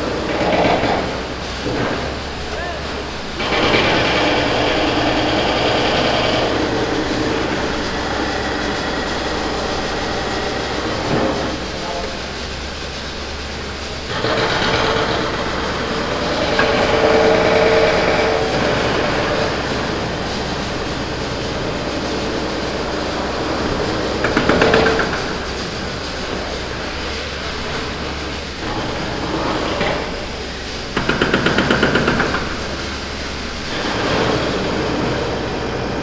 EC-1 młoty 2
EC-1 Lodz